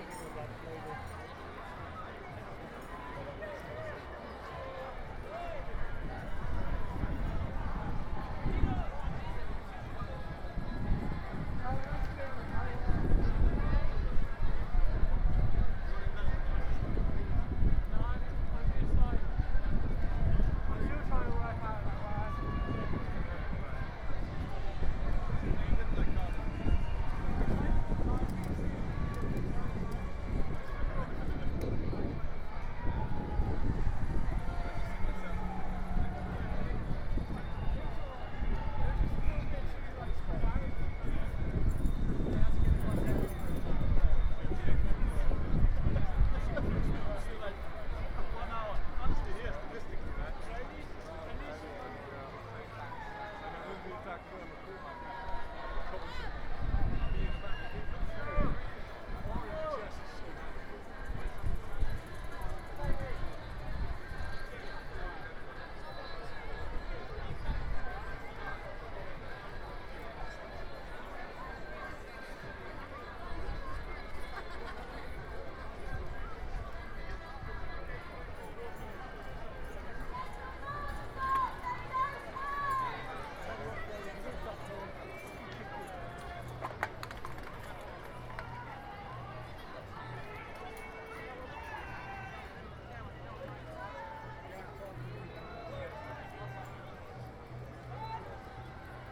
Marble Arch, Oxford St, London, UK - Anti-lockdown Freedom March
Binaural recording from the anti-lockdown freedom march in central London on Saturday 25th March. Attended by 25,000 to 500,000 people.